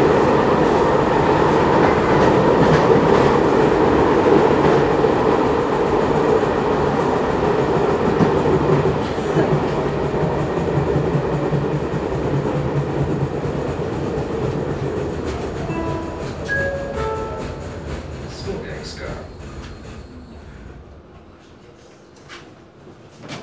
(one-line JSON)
{"title": "Poznan, PST line - line 12", "date": "2014-06-19 15:53:00", "description": "riding downtown on a renovated tram. although the shell has been modernized the frame is a few dozen years old and still makes rattling and rumbling sounds while riding. especially at gaining speed and breaking. pneumatic doors also make interesting creaking sound.", "latitude": "52.43", "longitude": "16.92", "altitude": "88", "timezone": "Europe/Warsaw"}